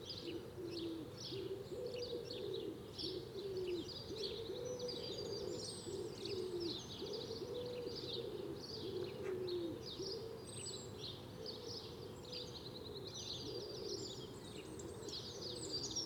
{
  "title": "Contención Island Day 76 outer north - Walking to the sounds of Contención Island Day 76 Sunday March 21st",
  "date": "2021-03-21 05:51:00",
  "description": "The Poplars High Street St Nicholas Avenue\nThe dawn slowly lightens\ngrass and detritus\nsaturday night revelry\nTo a quieting of sparrow cheep\nmagpie crows gulls\ndistant blackbird’s song\nWood pigeon’s\nundulating flight\nwith one early-spring wing clap",
  "latitude": "55.01",
  "longitude": "-1.62",
  "altitude": "63",
  "timezone": "Europe/London"
}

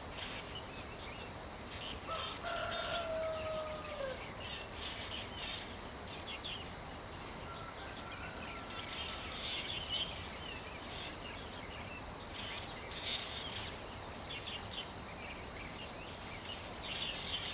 {
  "title": "南坑一號橋, 埔里鎮成功里 - Birds and Chicken sounds",
  "date": "2016-07-13 05:31:00",
  "description": "early morning, Birdsong, Chicken sounds\nZoom H2n MS+XY",
  "latitude": "23.96",
  "longitude": "120.89",
  "altitude": "433",
  "timezone": "Asia/Taipei"
}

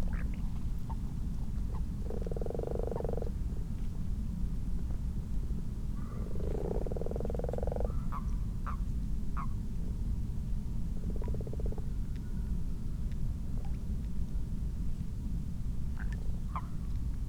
{
  "title": "Malton, UK - frogs and toads ...",
  "date": "2022-03-12 20:25:00",
  "description": "common frogs and common toads in a garden pond ... xlr sass to zoom h5 ... time edited unattended extended recording ...",
  "latitude": "54.12",
  "longitude": "-0.54",
  "altitude": "77",
  "timezone": "Europe/London"
}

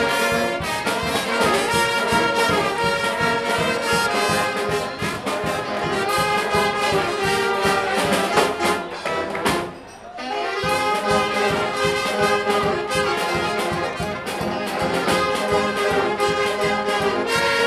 {
  "title": "Capucins - Victoire, Bordeaux, France - Fanfare !",
  "date": "2014-04-20 12:52:00",
  "description": "Next to the Capucins market place, two medecine students fanfares, from Reims and Bordeaux, gathered to play more than one hour and brightened up this cloudy day.\n[Tech.info]\nRecorder : Tascam DR 40\nMicrophone : internal (stereo)\nEdited on : REAPER 4.611",
  "latitude": "44.83",
  "longitude": "-0.57",
  "altitude": "14",
  "timezone": "Europe/Paris"
}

